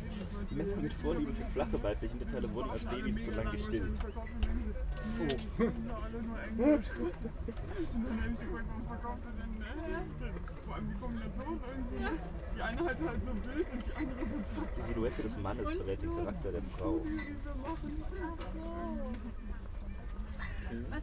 Tempelhofer Park, Berlin, Deutschland - temporary library in an old phone booth
a temporary library installation in an old phone booth, a man reads from a strange book about the human character.
(Sony PCM D50, DPA4060)